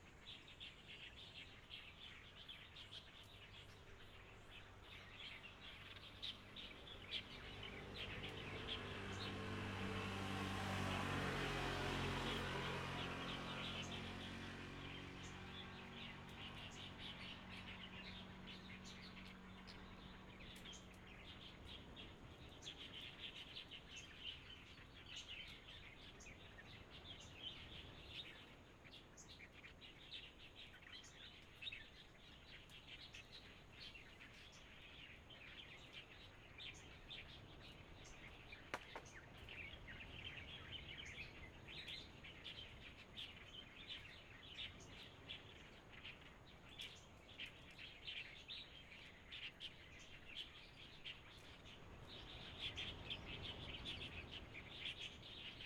Beigan Township, Taiwan - Birds singing
Birds singing, Traffic Sound
Zoom H6 XY
福建省 (Fujian), Mainland - Taiwan Border, 13 October